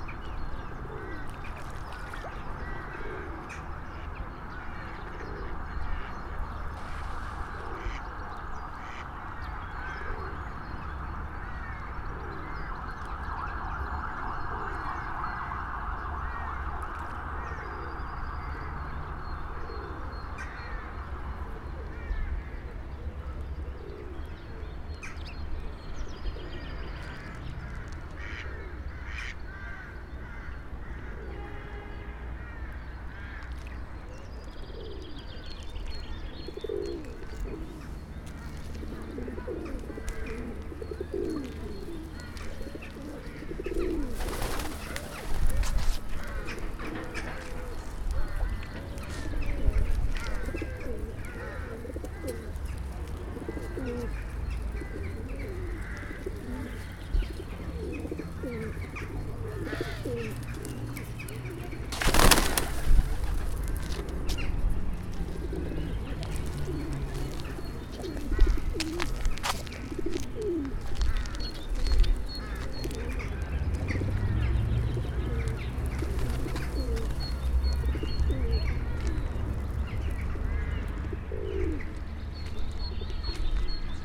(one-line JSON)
{"title": "Park of roses, Gorzów Wielkopolski, Polska - Sounds of the pond.", "date": "2020-04-23 15:25:00", "description": "Pigeons, splashing fish and some other park sounds.", "latitude": "52.73", "longitude": "15.23", "altitude": "27", "timezone": "Europe/Warsaw"}